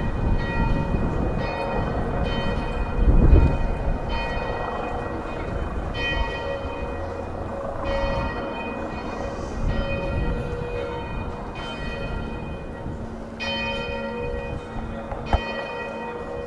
Bells of Sv. Jakub on a Saturday 6 pm